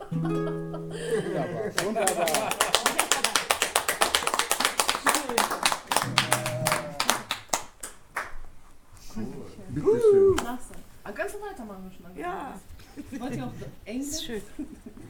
Westtünnen, Hamm, Germany - Anna's Songs...
… the small hours of the morning, after a long 40th birthday party… the remaining guests reassemble the living room… settle around the low table… and Anna reaches for the Polish song books in the shelves behind her….
… in den frühen Morgenstunden, nach einer langen 40sten Geburtstagsparty… die verbleibenden Gäste setzen das Wohnzimmer wieder in Stand… sammeln sich um den niedrigen Tisch… und Anna greift nach den Polnischen Liederbüchern im Regal hinter ihr…
mobile phone recording
Anna Huebsch is an artist, originally from Gdansk, now based in Hamm.
19 April 2015, ~04:00